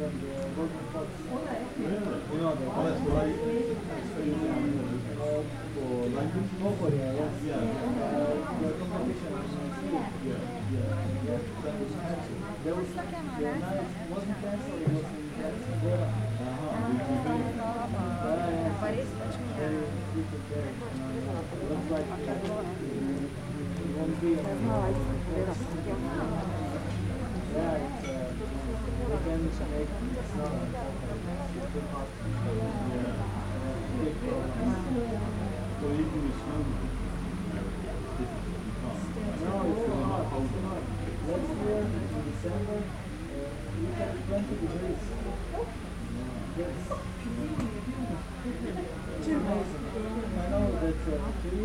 Ribniška ulica, Maribor, Slovenia - corners for one minute
one minute for this corner: Ribniška ulica 9
24 August 2012, 20:53